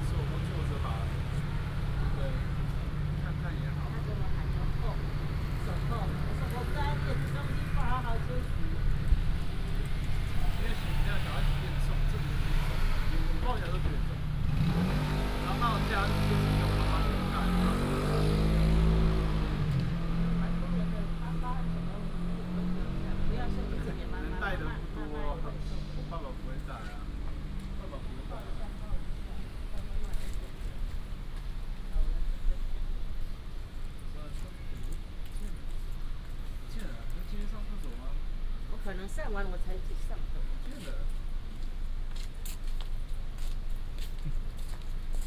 Zhuzhong Rd., Zhudong Township - In the corner
In the corner of the road, In front of the convenience store, Traffic sound
Zhudong Township, Hsinchu County, Taiwan